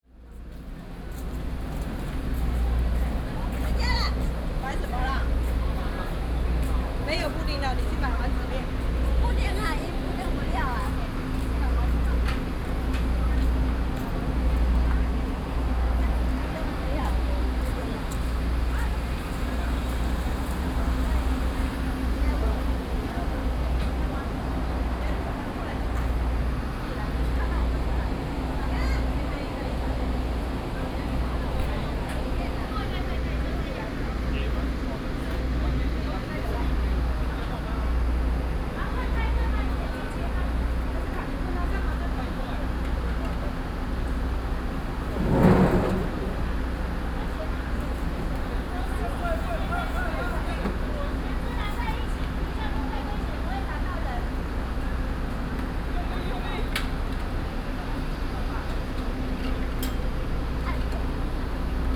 Next to the railroad tracks, in a small alley, Visitor
Binaural recordings, Sony PCM D50
New Taipei City, Taiwan